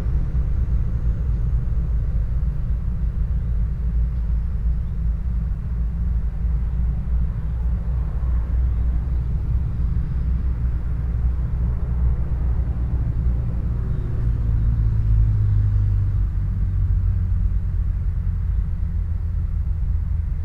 Rogerville, France - The trucks road
Walking to the Normandie bridge, we had to walk along this road. This is an enormous road intended for trucks. There's one car for twenty trucks. Recording of this 'mad road' !
21 July 2016, 07:45